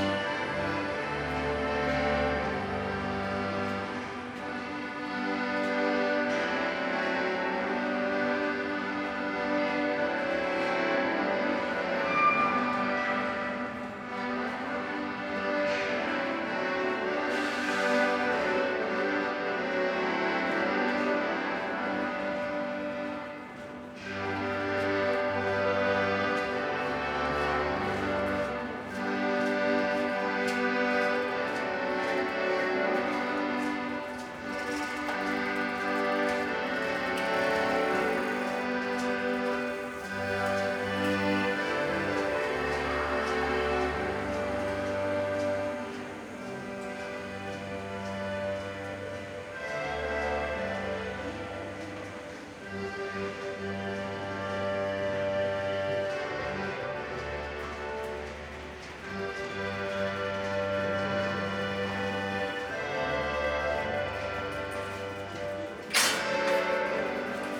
tunnel below river Spree, pedestrians and cyclists crossing, tunnel ambience, an old man playing the accordion
(Sony PCM D50, Primo EM172)